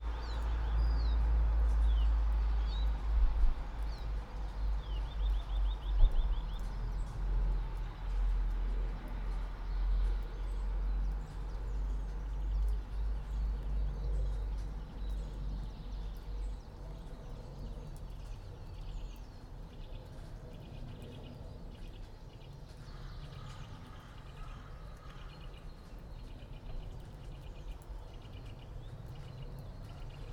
all the mornings of the ... - feb 17 2013 sun
17 February 2013, 09:08